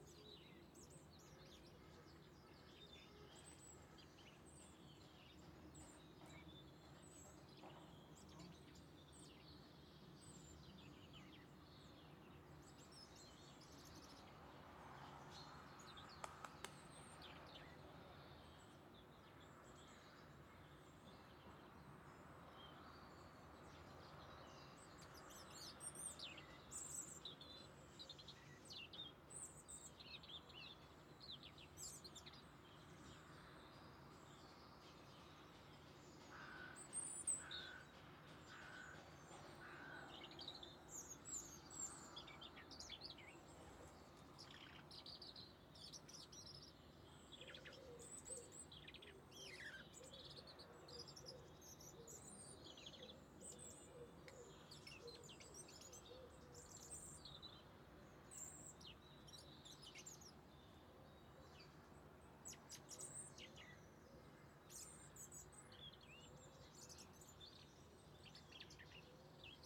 Seigy, France - Countryside in winter
Seigy, France, Winter atmosphere winter, few birds, car passes away
by F Fayard - PostProdChahut
Sound Device 633, MS Neuman KM 140-KM120
France métropolitaine, France